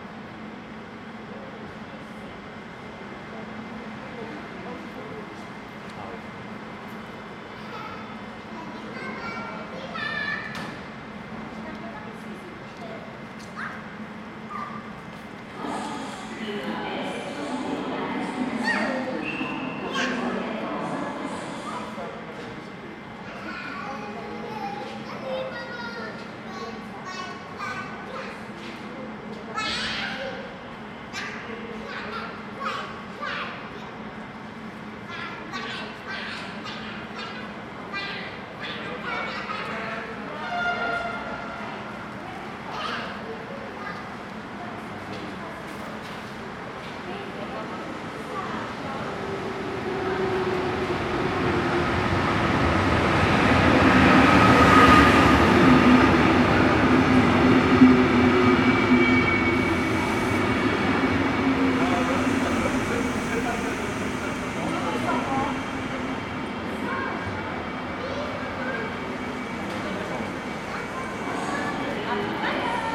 Platform ambience, voices, trains passing by.
Tech Note : Sony PCM-D100 internal microphones, wide position.